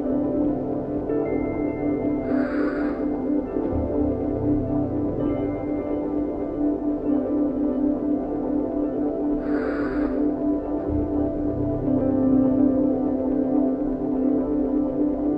long recording of the sound lab work during the transnaturale 2009 - here: water ambience based on local field recordings